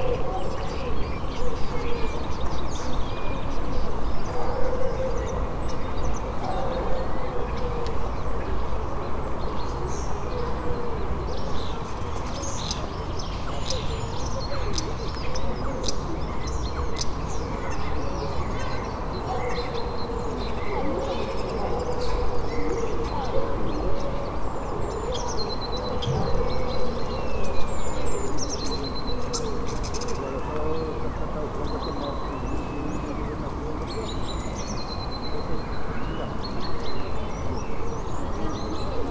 Birds (eurasian blue tit and european starling ) chirping in the park.
Recordred with Tascam DR-100 MK3
Sound posted by Katarzyna Trzeciak
województwo małopolskie, Polska, 4 April 2021